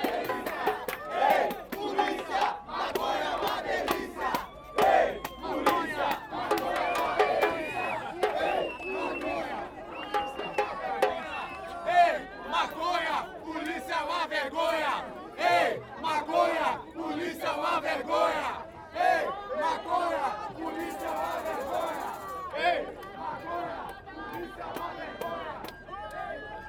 Salvador, Bahia, Brazil - Marijuana March
In the middle of an extremely peaceful legalise marijuana march in Salvador, Brazil.